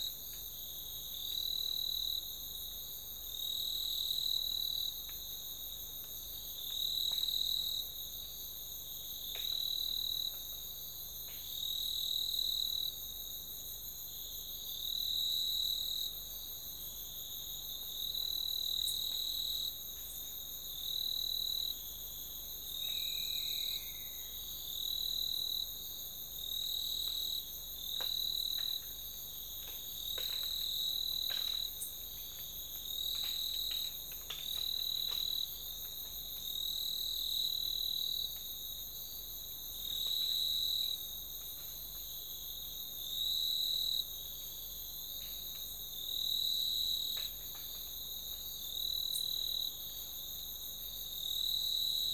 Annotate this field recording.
taken from a 2 hour recording made in the dubuji mangroves. in the distance you can faintly hear some music from the town as well as drones from the generators. recorded with an AT BP4025 into an Olympus LS-100.